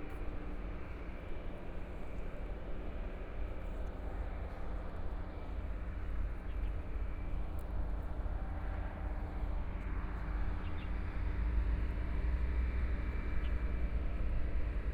Wenchang Rd., Taitung City - Environmental sounds
Environmental sounds, Noise Station, Birds, Binaural recordings, Zoom H4n+ Soundman OKM II ( SoundMap2014016 -8)